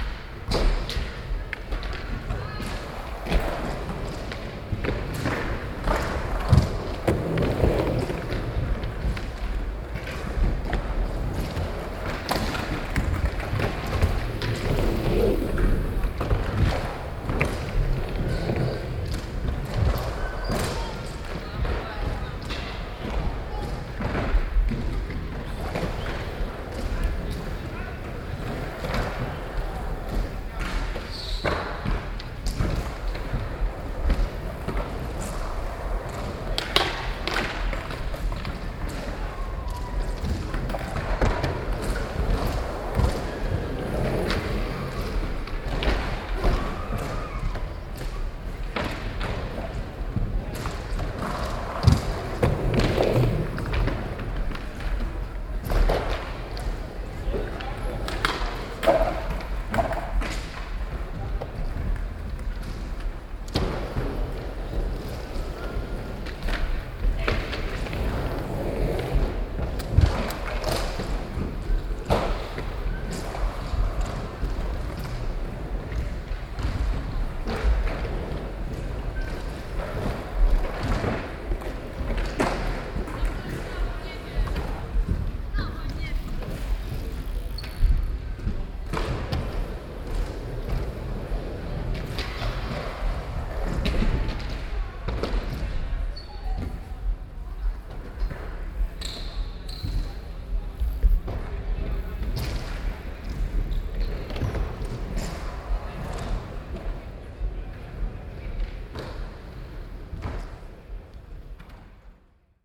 Skatepark, Stvanice Island
Stvanice Skatepark has a history dating to 1993, since that time it has became recognised not only in the Czech Republic In the world as well. This park is namely very modern and hosts prestigious competitions such as the Mystic Sk8 Cup.
11 June 2011